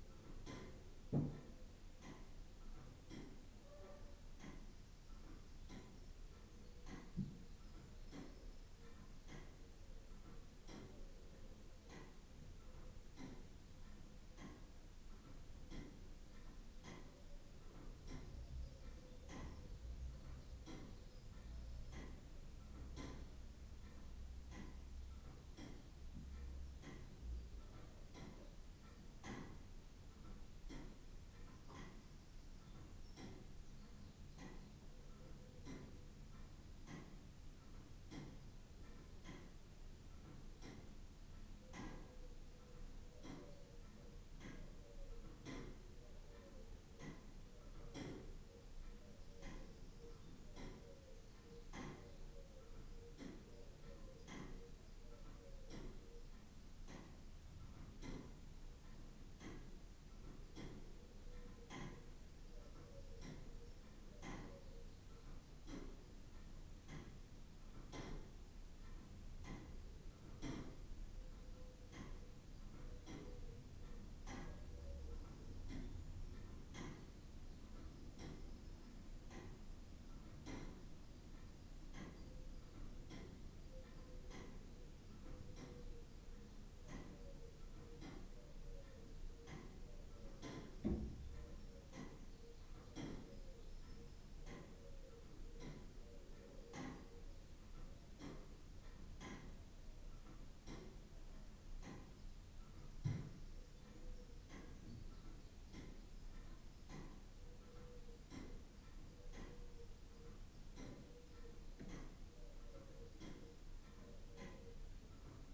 Mere Grange, Fridaythorpe, Driffield, UK - inside St Marys parish church ...
inside St Mary's parish church ... SASS ... background noise ... traffic ... bird calls ... song ... wren ... collared dove ... song thrush ...
Yorkshire and the Humber, England, UK